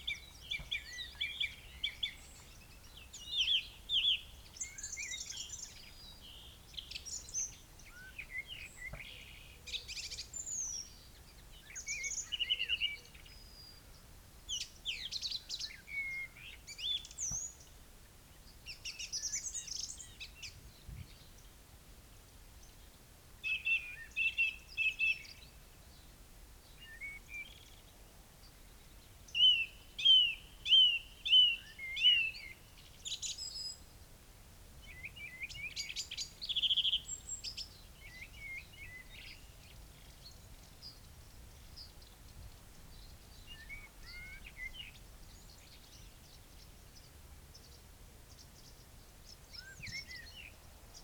Song thrush song soundscape ... until after 11 mins ... ish ... pair of horse riders pass through ... bird calls and song from ... song thrush ... yellowhammer ... blackbird ... corn bunting ... chaffinch ... dunnock ... red-legged partridge ... crow ... rook ... open lavaliers mics clipped to hedgerow ... one swear word ... background noise from sheep and traffic ...